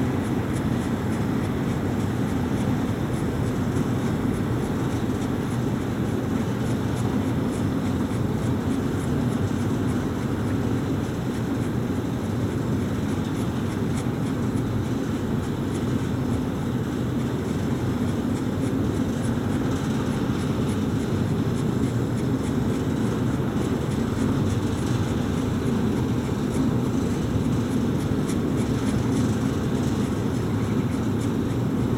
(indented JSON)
{
  "title": "Remix Cement, Thames and Medway Canal, Gravesend, UK - Cement Works at Night",
  "date": "2021-06-05 22:30:00",
  "description": "Remix Cement works ticking over, accompanied by some frogs and other wildlife, and a couple of trains.",
  "latitude": "51.44",
  "longitude": "0.40",
  "altitude": "3",
  "timezone": "Europe/London"
}